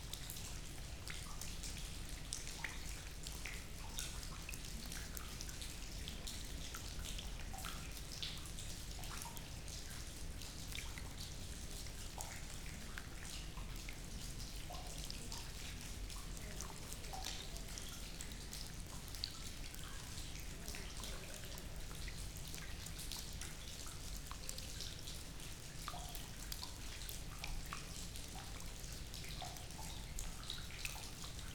Gmina Kętrzyn, Poland, rain in Wolf's Lair
evening visit to Hitler's bunkers in Poland. mild rain, the leaking roof...not so many tourists...
Czerniki, Warmian-Masurian Voivodeship, Poland, 11 August